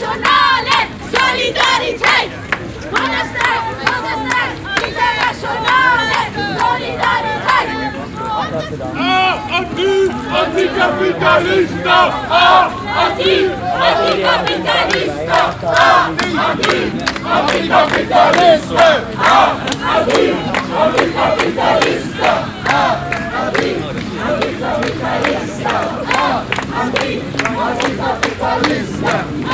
15O - Occupy Den Haag, Malieveld
15 October 2011, 13:00